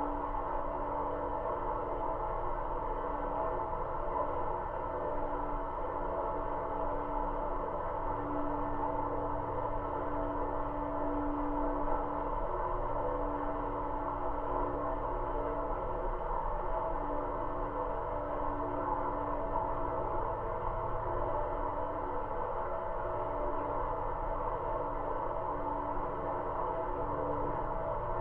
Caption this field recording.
small river evening listening through the railings of the bridge. recorded with geophone